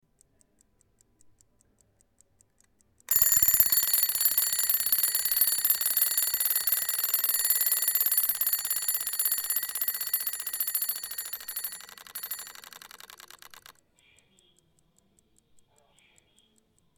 bonifazius, bürknerstr. - globus clock
20.02.2009 17:00 kleine globusuhr / little globe clock
Berlin, Deutschland